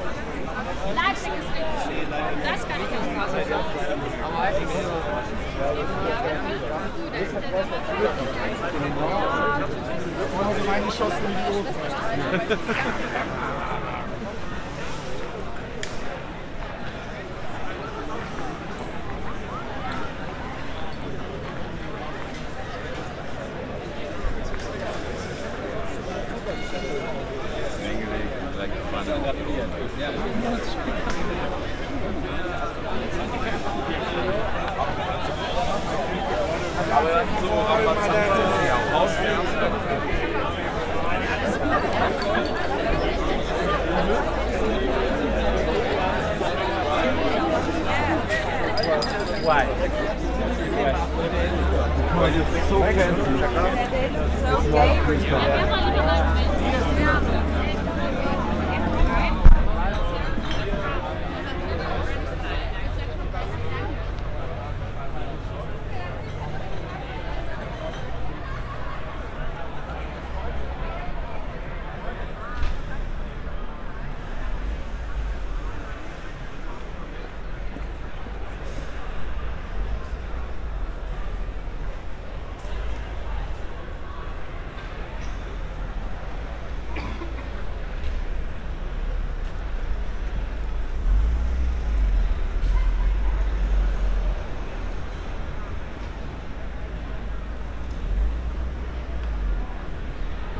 Brüsseler Platz, Köln, Deutschland - midnight ambience
World Listening Day: midnight ambience at Brüsseler Platz, Cologne. Many people are hanging out here in warm summer nights, which provokes quite some conflicts with neighbours.
(live broadcast on radio aporee, captured with an ifon, tascam ixj2, primo em172)
Cologne, Germany, 18 July 2014, ~00:00